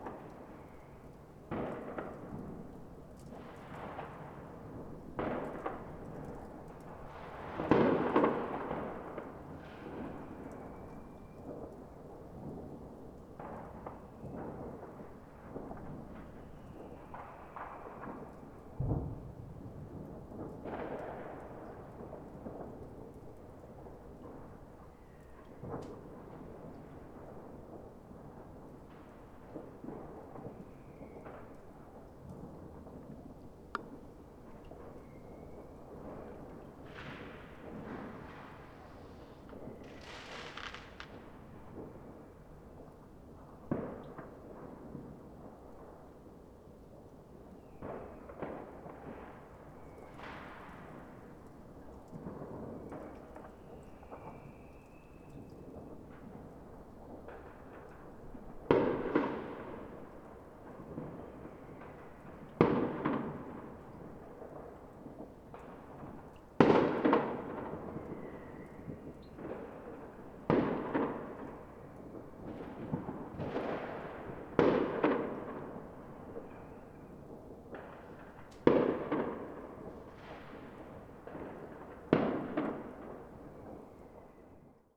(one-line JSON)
{"title": "Berlin Bürknerstr., backyard window - new years eve", "date": "2009-12-31 23:30:00", "description": "new years eve, fireworks 30 min before midnight, snowing", "latitude": "52.49", "longitude": "13.42", "altitude": "45", "timezone": "Europe/Berlin"}